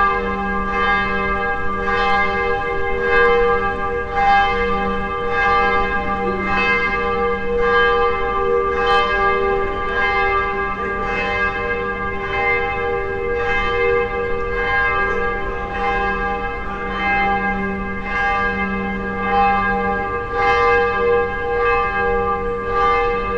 around central city bank and monastery, Maribor - curch bells
building sing, reverberation